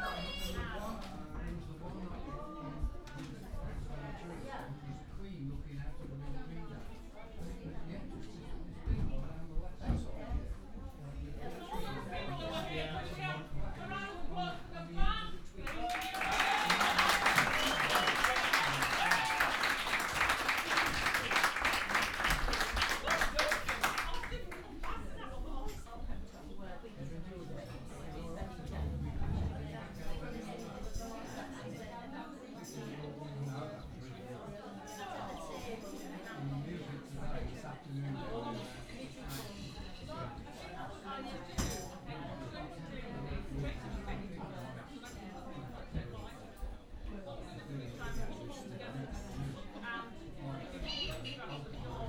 Post Office, Weaverthorpe, Malton, UK - platinum jubilee celebrations in a village hall ...
platinum jubilee celebrations in a village hall ... weaverthorpe ... binaural dummy head with luhd in ear mics to zoom h5 ... displays refreshments ... a ukulele band ...